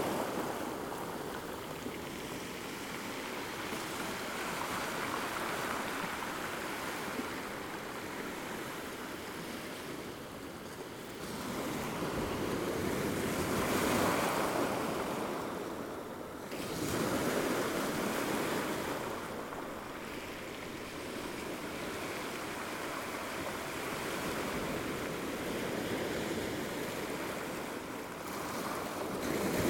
Recording of the sea during one hour on the Kora Karola beach. It's high tide. Waves are big and strong. Shingle are rolling every wave.